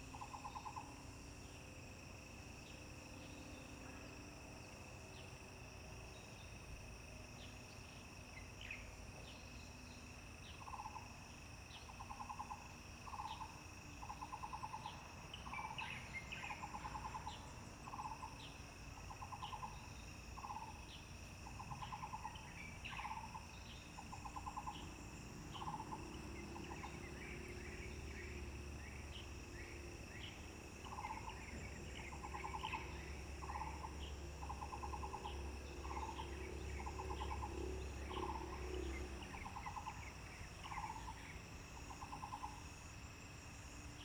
Taomi Ln., Nantou County - Ecological pool
Bird calls, Frogs chirping, Sound of insects
Zoom H2n MS+XY
Puli Township, 桃米巷29-6號, 30 April 2015